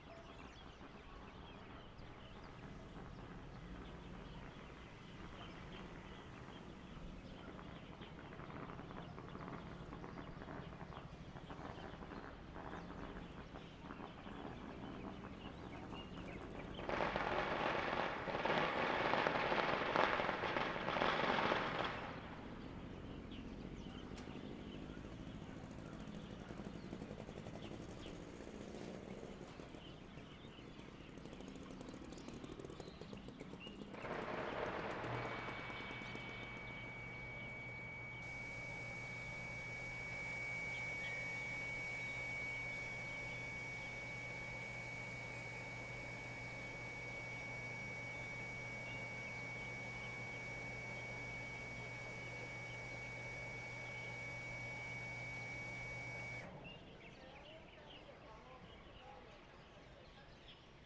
On the Penthouse platform, Neighbor's voice, Birdsong sound, Chicken sounds, The sound of firecrackers, Pumping motor sound, Motorcycle sound, Zoom H6 M/S
Shuilin Township, 雲151鄉道, 1 February 2014, ~08:00